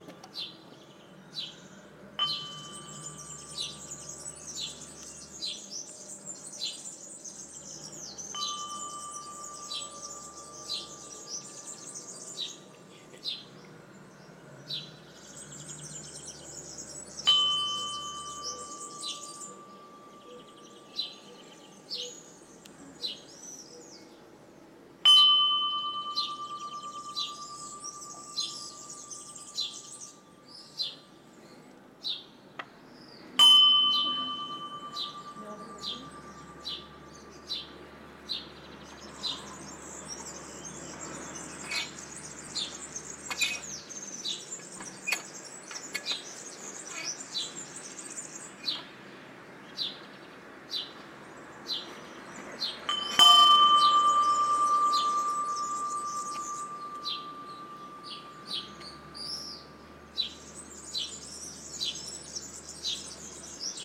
Via O. Caosi, Serra De Conti AN, Italia - Bluebell sounds
You can hear sounds of a medium wall bluebell.
(binaural : DPA into ZOOM H6)